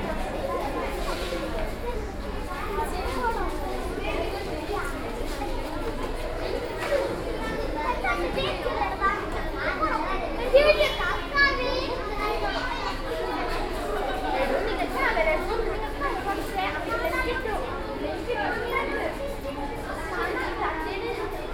Cochin, Santa Cruz Basilica, Silence please